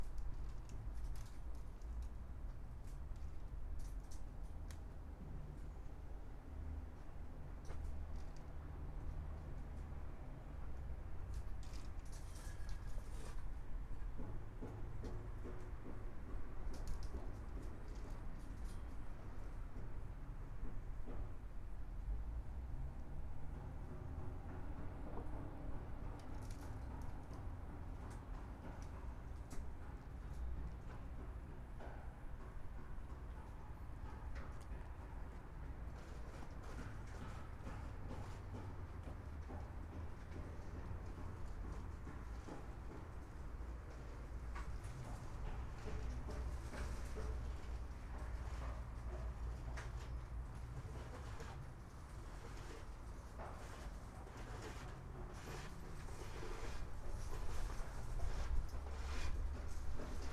Ob železnici, Maribor, Slovenia - corners for one minute
one minute for this corner - ob železnici 4